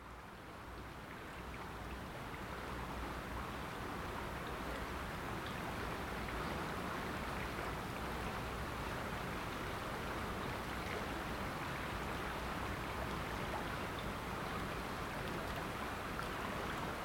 Lindenpl., Bad Berka, Deutschland - River tones, forms, and gestures 3- 200421.PM22-23
A binaural recording.
Headphones recommended for best listening experience.
A personally "defined" 400 Meter space of the Ilm river revealing its diverse tones, forms and gestures. The night peripheral ambience is relatively calm so there is less masking of the space.
Recording technology: Soundman OKM, Zoom F4.